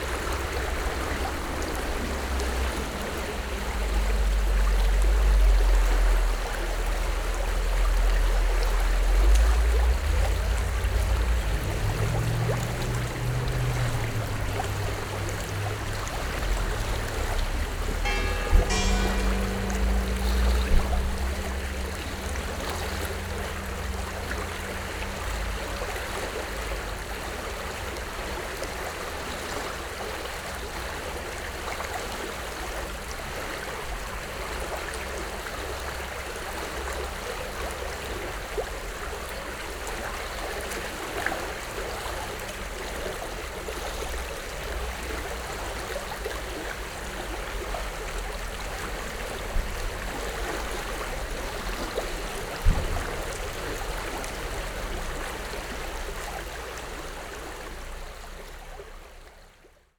Emonska cesta, Ljubljana - flow of Gradaščica river
small Gradaščica river carries a lot of water and is flowing quickly after rainy days
(Sony PCM D50, DPA4060)
Ljubljana, Slovenia, 5 November 2012, 23:15